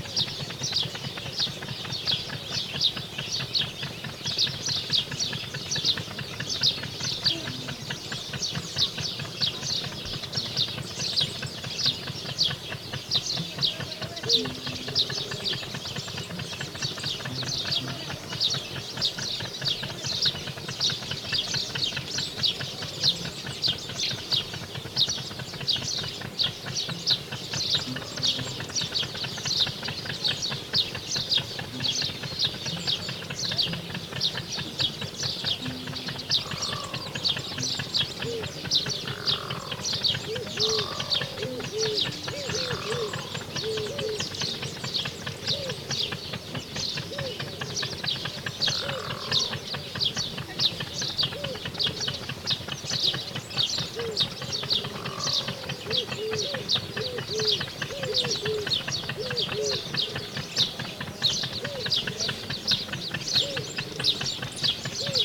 Unnamed Road, Sudan - water pumps along the river Nile-
During a picknick i heared these water pumps struggling to keep Sudan fertile.
ولاية الخرطوم, السودان al-Sūdān, April 1987